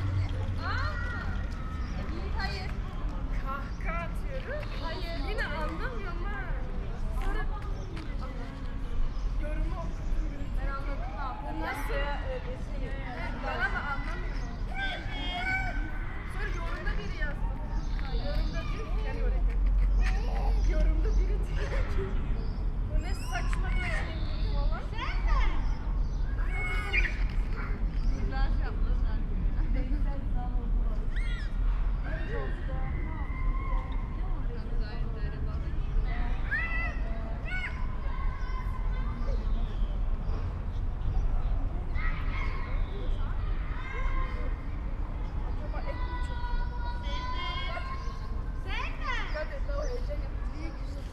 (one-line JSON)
{"title": "Schinkestraße, Berlin - playground ambience", "date": "2018-04-27 14:55:00", "description": "Schinkestr., playground afternoon ambience\n(Sony PCM D50, Primo EM172)", "latitude": "52.49", "longitude": "13.42", "altitude": "36", "timezone": "Europe/Berlin"}